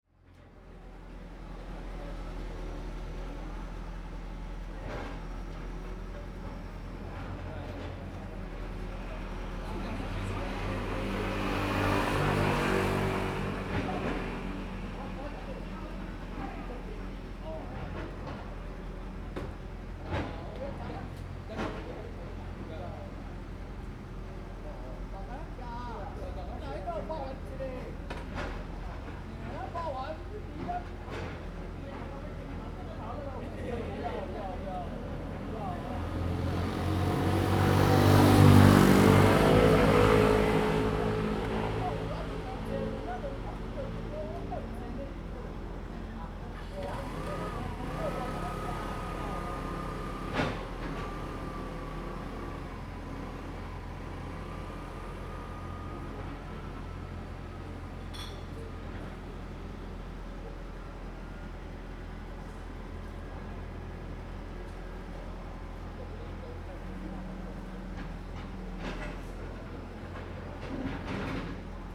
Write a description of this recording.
Small village, Traffic Sound, Sound Construction, Zoom H2n MS+XY